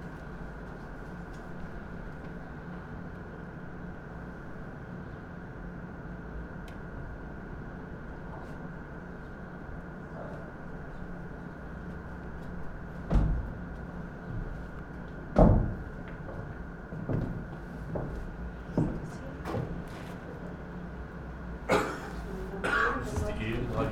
zionskirche, tower, a freezing guy up there colects 1 euro from everybody who climbs up here. hum from an e-plus mobile phone antenna station.